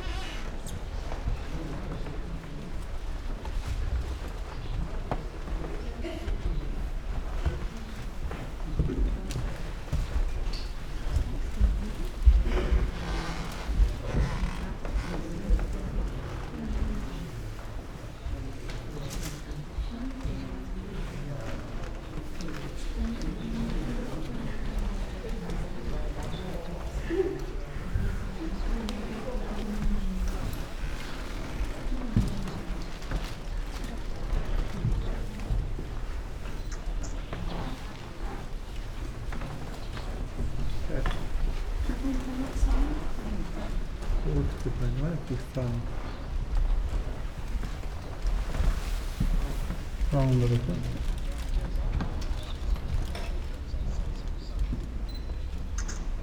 Sanssouci, Potsdam, Germany - walk
slow walk through rooms with different kind of wooden floors and parquet, aroundgoers and their steps, whisperings, plastic raincoats and plastic bags for umbrellas
Brandenburg, Deutschland, 18 May 2013, 02:54